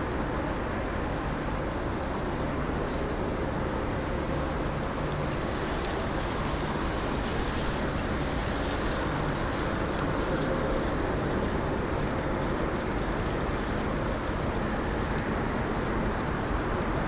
вул. Шмідта, Костянтинівка, Украина - Шум камышей
Тихая река, шумит дорога на противоположном берегу. Ветер. Шелест камышей и пение птиц вдалеке
Kostyantynivka, Ukraine, 12 March 2019, ~08:00